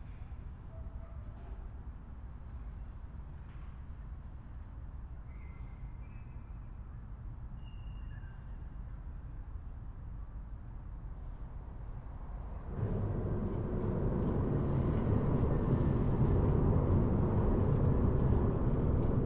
Train are passing by. In the same time a concert is taking place near Floridsdorf bridge.